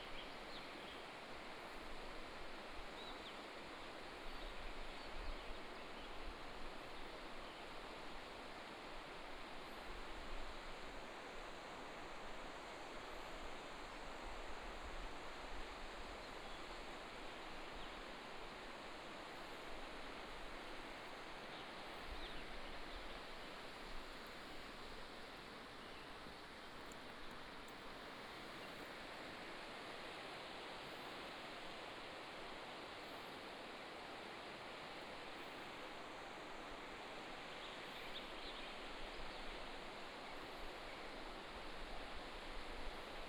土板產業道路, Tuban, Daren Township - Standing on the cliff
Early morning mountain, Standing on the cliff, Bird cry, Stream sound